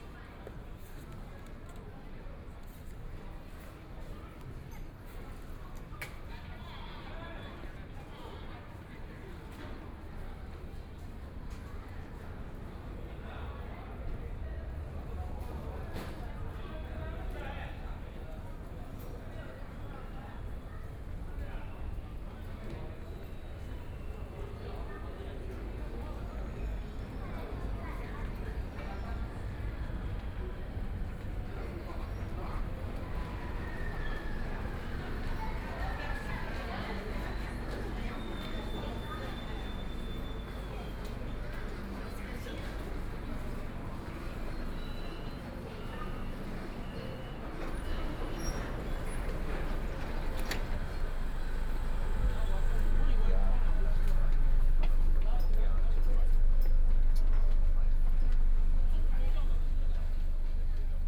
South Xizang Road Station, Shanghai - Walking in the subway station
Walking in the subway station, From the station entrance, Via escalators, After walking in the hall, Toward the platform, Voice message broadcasting station, Binaural recording, Zoom H6+ Soundman OKM II